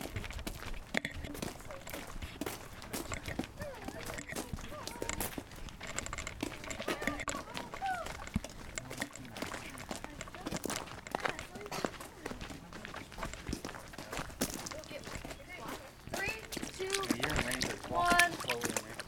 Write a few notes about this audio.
Sound recording by Zachary Roberts and Alicia Roberts. Walking to see the bison exhibit, Ouabache State Park, Bluffton, IN. Recorded at an Arts in the Parks Soundscape workshop at Ouabache State Park, Bluffton, IN. Sponsored by the Indiana Arts Commission and the Indiana Department of Natural Resources.